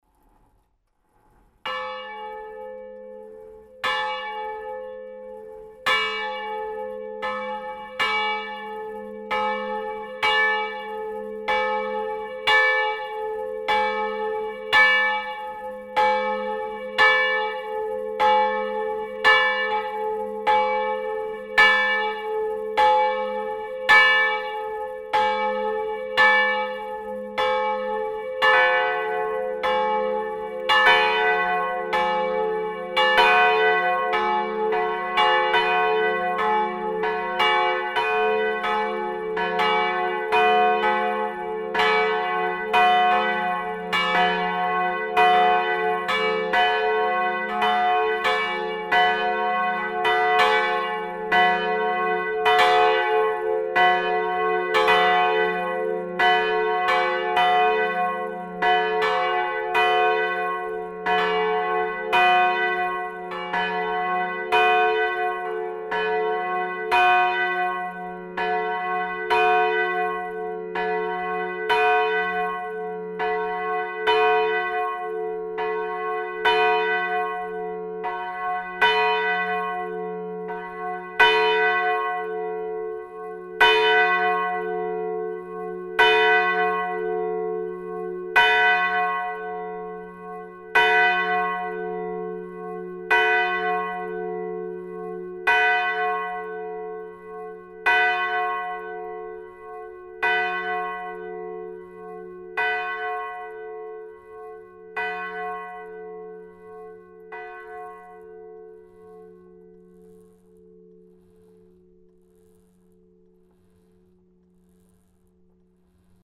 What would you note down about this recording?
The Isières two bells ringed manually in the bell tower.